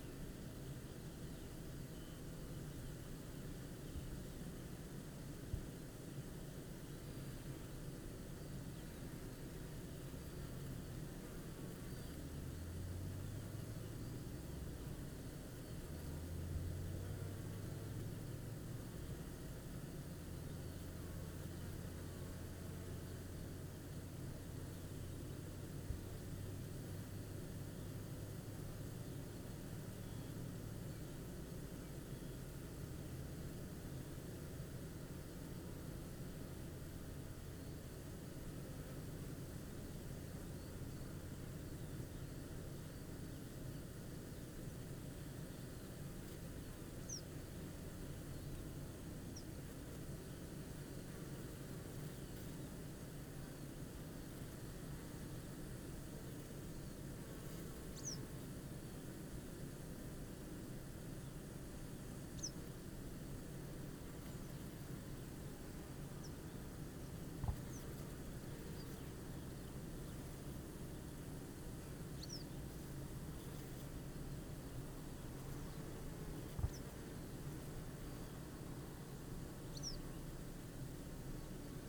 bee hives ... dpa 4060s clipped to bag to Zoom H5 ... details as above ... as was leaving a sprayer arrived and doused the beans with whatever dressing it was spraying ... no idea what effect would have on the bees or hives ..?
Yorkshire and the Humber, England, United Kingdom